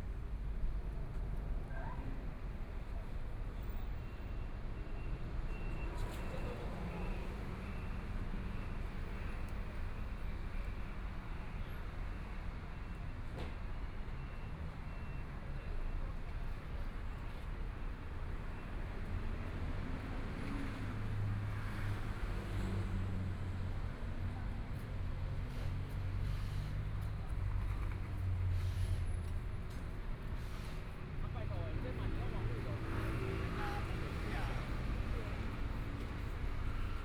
Zhongshan District, Taipei City - Entrance to the market
Entrance to the market, Traffic Sound, Being compiled and ready to break businessman, Binaural recordings, Zoom H4n+ Soundman OKM II